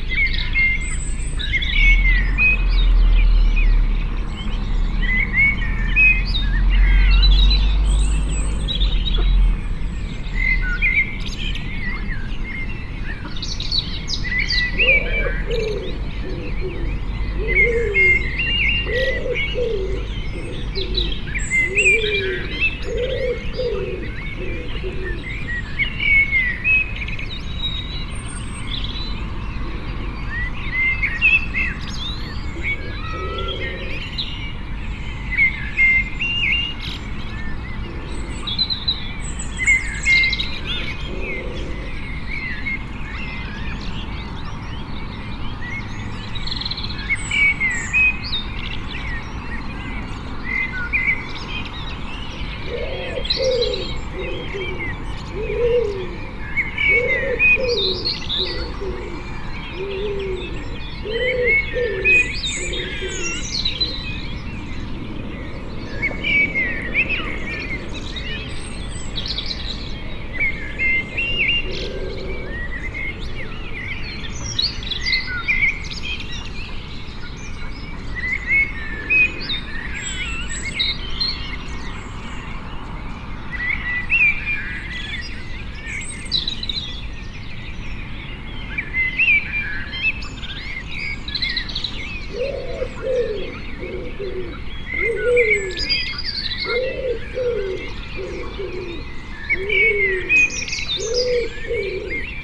Dawn, Malvern, UK - Dawn
Recorded overnight on the 5-6th June as an experiment by hanging the microphone rig out of the dormer window facing east towards the back garden. The mics are flat against the roof tiles which seems to enhance the stereo separation and maybe even a certain amount of boundary effect. The fox at the beginning has an echo I have not heard before, probably from the side of the Malvern Hills. The many jets are because of an international flight line a few miles south in Gloucestershire and is unusually busy possibly because of relaxed Covid restrictions in the UK. The cuckoo is the first I have heard for a few years. The ducks are 14 chicks, now almost fledged and ready to fly on our pond. This is the second year Mallard have nested here. This section of the overnight recording starts at 4.05am and on this day 77 years ago my Uncle Hubert was preparing to go ashore at Arromanches. I wonder what sounds he would have heard.
England, United Kingdom, June 2021